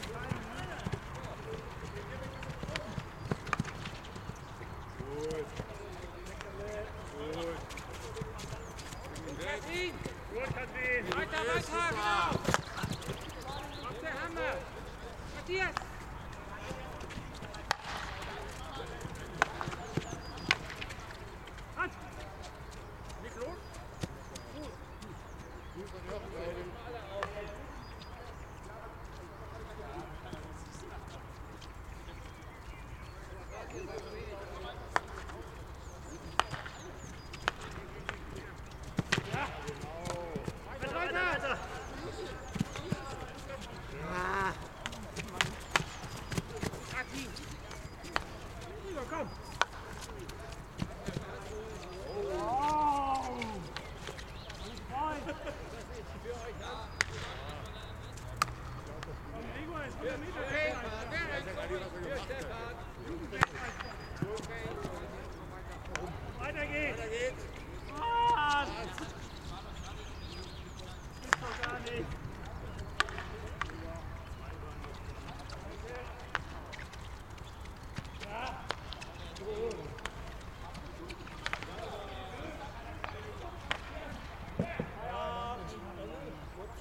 Field hockey training (parents team) for fun in the evening
Zoom F4 recorder, Zoom XYH-6 X/Y capsule, windscreen